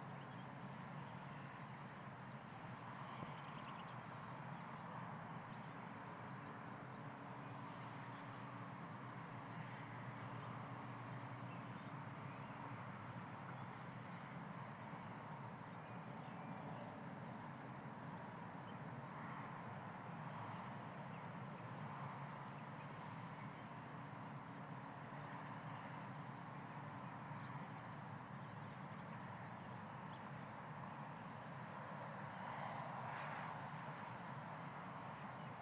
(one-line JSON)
{"title": "Olsztyn, Polska - Track lake", "date": "2013-04-13 15:09:00", "description": "Track lake. Ice is still present.", "latitude": "53.79", "longitude": "20.54", "altitude": "122", "timezone": "Europe/Warsaw"}